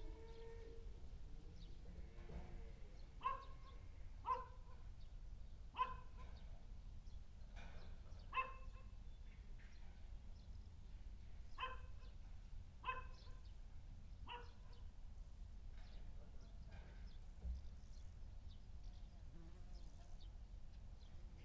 howling cows in the stable, car passing by
the city, the country & Me: july 11, 2015